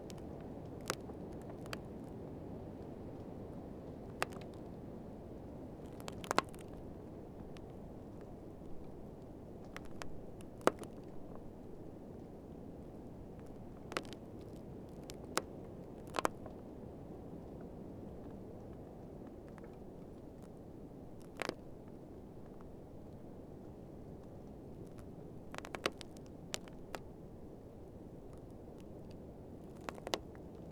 Lithuania, Utena, tree in ice
an alder tree with its roots frozen in icy ground